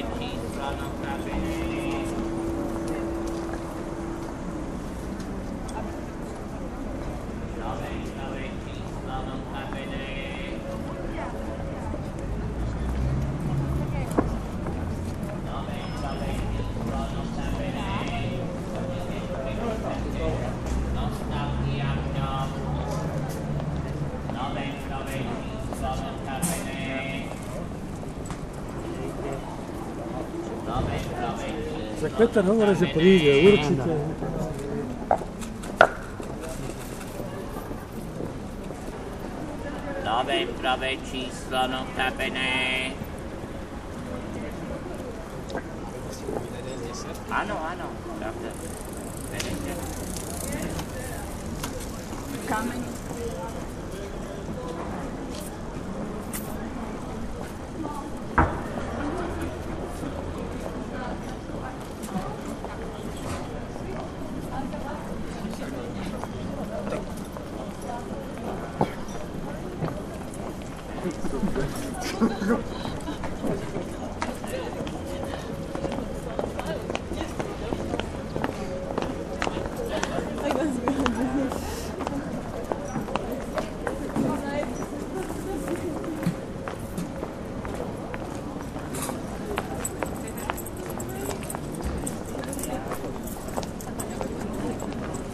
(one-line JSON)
{"title": "Bratislava I Slovenská republika - vendor of bratislava's big issue 'nota bene'", "date": "2012-11-10 19:02:00", "description": "Actually this guy used to be a well known street vendor of all kinds of newspapers in Bratislava, well known especially for his characteristic chant.", "latitude": "48.14", "longitude": "17.11", "altitude": "144", "timezone": "Europe/Bratislava"}